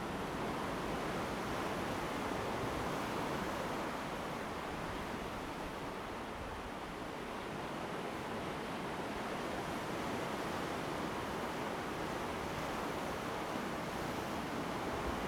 界橋, Chenggong Township - on the rocky shore
sound of the waves, At the seaside, Standing on the rocky shore
Zoom H2n MS+XY
Taitung County, Taiwan